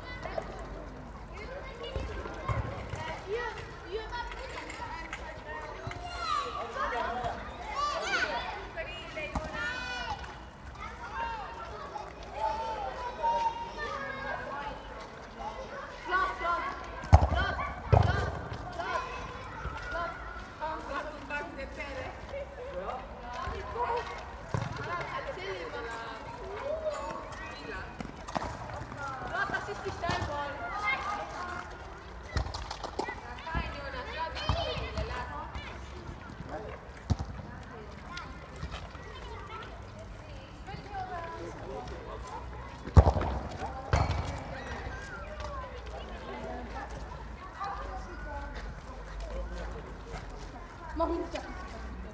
Bindermichl Tunnel, Linz, Austria - Kids kicking footballs around between concrete walls
Lots of evening activity in this park, where basketball, volleyball, mini-football, skateboard pitches have been setup between the concrete wall of this roundabout design.
Oberösterreich, Österreich, 8 September, 19:11